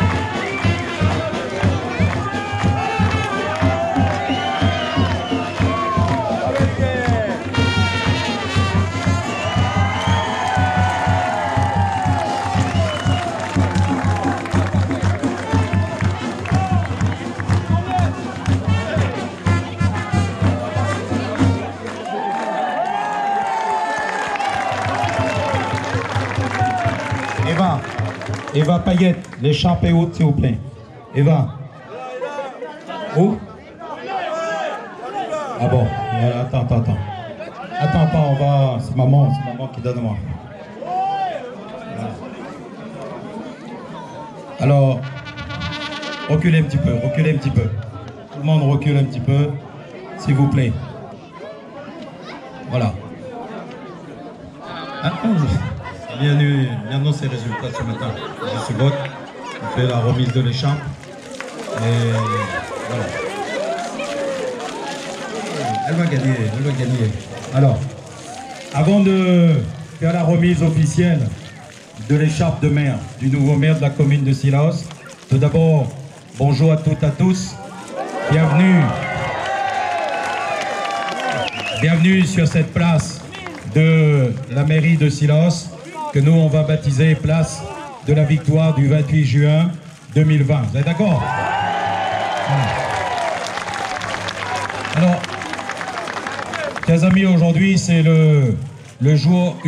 Rue du Pere Boiteau, Réunion - 20200705 1441-1510 remise de l-ercharpe du maire de CILAOS
20200705_1441-1510_remise_de_l-ercharpe_du_maire_de_CILAOS
La Réunion, France